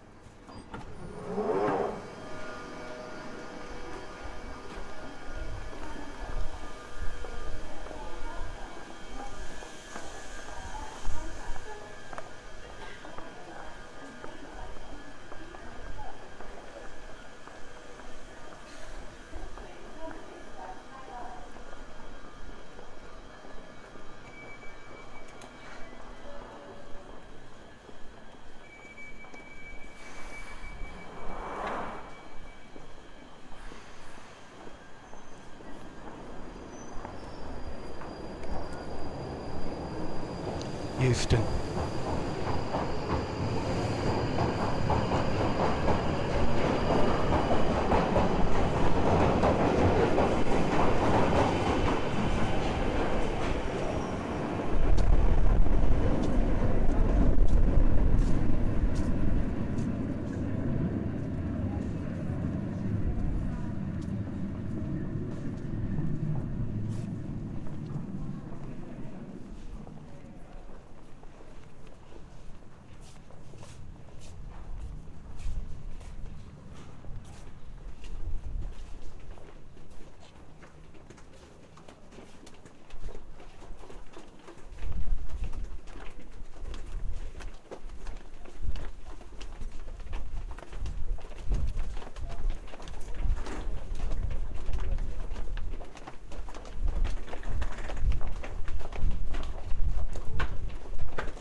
Underground from Goodge Street to Euston Stations
Travelling from Goodge Street (Tottenham Court Road) to Euston Station.